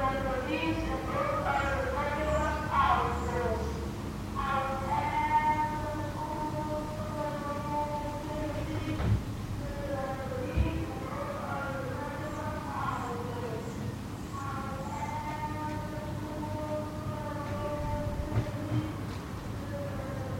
Mortsel, Mortsel, België - Scrap & Metal Buyers
Scrap & Metal Buyers driving street to street